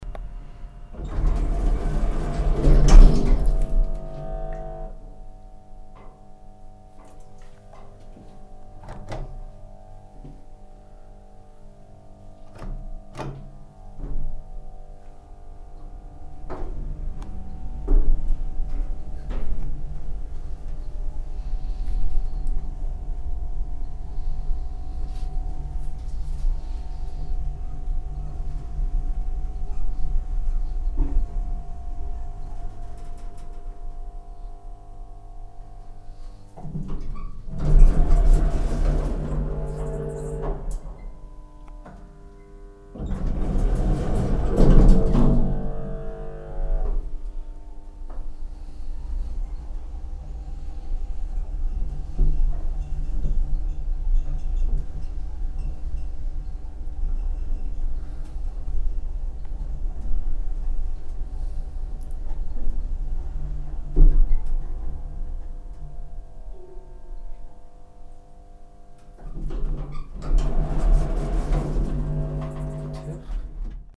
a drive with the elevator of the mouson tower
soundmap d - social ambiences and topographic field recordings